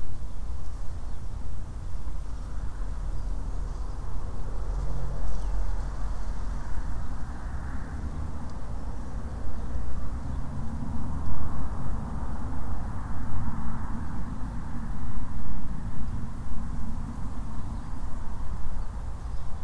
Aldingham, UK - Garden sounds
in my garden: traffic, birds, breeze blowing through the grasses and plants and distant planes. Recorded under a bright blue sky with a Tascam DR-05
Newbiggin, Ulverston, UK, 2 January 2017, 13:15